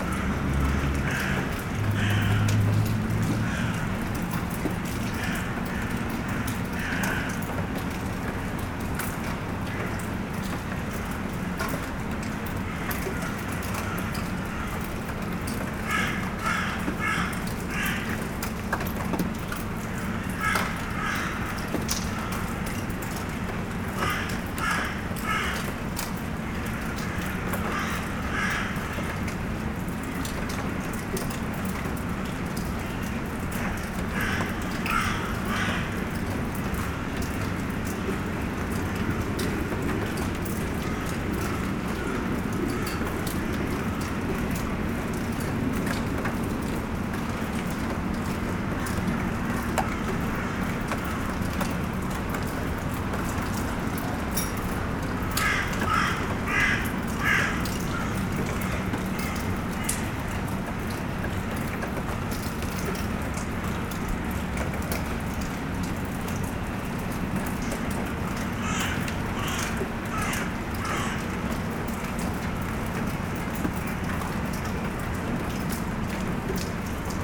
General ambiance in the abandoned coke plant, from the mechanical workshop stairs. Crows are shouting and there's a small rain.
Seraing, Belgium, 18 March 2017